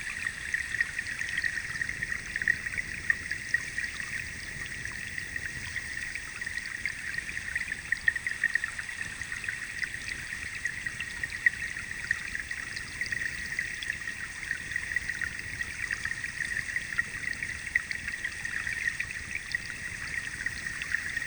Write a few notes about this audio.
Hydrophone recording of the Rokytka river. The recording became a part of the sound installation "Stream" at the festival M3 - Art in Space in Prague, 2019